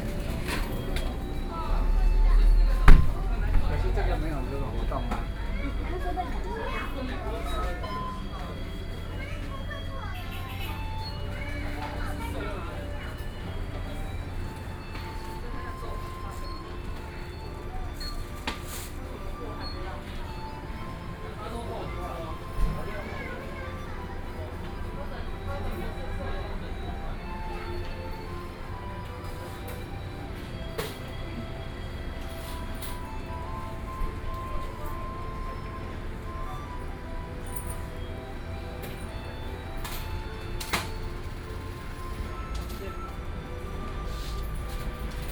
walking on the Road, Traffic Sound, Various shops sound, Into convenience store
Sony PCM D50+ Soundman OKM II
21 July 2014, ~20:00